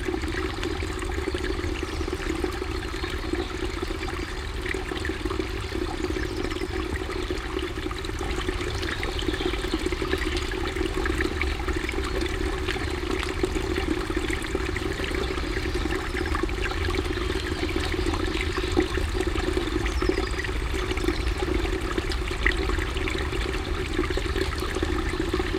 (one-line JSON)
{"title": "ratingen, scharzbachtal, feld, kleiner wasserlauf", "description": "kleiner wasserlauf, gluckernd, teils wieder im waldboden verschwindend, an weitem feld und waldrand, morgens\n- soundmap nrw\nproject: social ambiences/ listen to the people - in & outdoor nearfield recordings", "latitude": "51.28", "longitude": "6.89", "altitude": "97", "timezone": "GMT+1"}